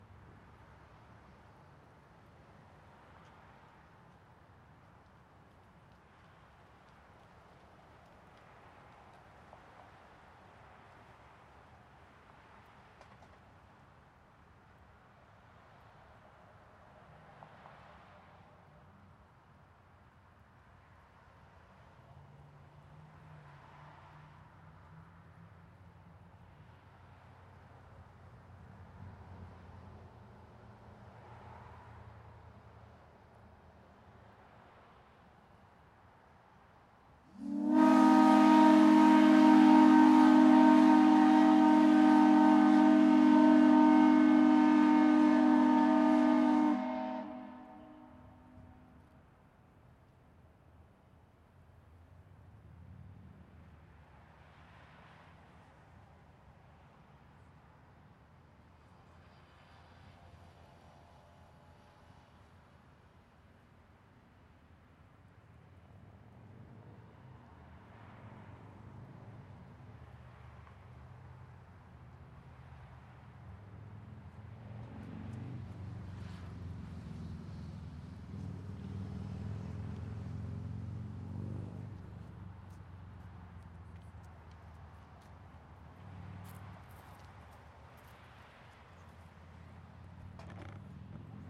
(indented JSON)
{
  "title": "Community Waterfront Heritage Centre - William Kennedy & Sons/Black Clawson Kennedy Whistle \"There She Blows\"",
  "date": "2016-11-18 12:00:00",
  "description": "In the 1940/1941 time period a steam ship whistle was installed at William Kennedy and Sons. It sounded multiple times throughout the day signaling critical times such as warning that a shift was ending soon or to mark the lunch break or the end of a shift. It was powered by steam and later by gas. It had a reputation for being exact and the general community as well as the factory workers referenced it as a marker of time in their day. It is reported that the whistle was last heard in 1992. The company closed in 1997 and the factory was demolished. Today the land lies empty but on the other side of the street from this block of derelict property stands the Community Waterfront Heritage Centre. On June 26, 2002 the whistle sounded once again, this time from its new home, the roof top of the Heritage Centre.",
  "latitude": "44.57",
  "longitude": "-80.94",
  "altitude": "177",
  "timezone": "America/Toronto"
}